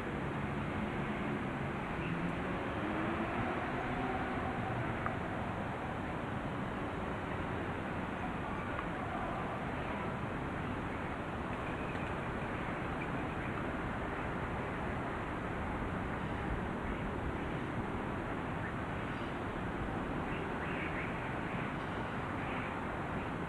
Ce jour-là mes pas m’ont emmené dans un quartier fait de ruelles & d’escaliers labyrinthiques, en direction de ND de la garde, depuis l’est, jusque, tout en-haut, une voie sans issue.
Un peu essoufflé j’ai écouté la ville d’en haut : parmi les propriétés & jardins, un pigeon ramier lançait son chant d’amour & un ténor travaillait ses vocalises.
There was this research on “silence in Marseille” which questioned the silence in the city and what it allows to hear. That day my steps took me to a labyrinthic hill made of alleys & maze stairs, in the direction of the ND de la garde, from the east, to the very top, a dead end.
A little breathless I listened to the city from above: among the villas & gardens, a rummy pigeon launched his song of love & a tenor worked his vocalizations.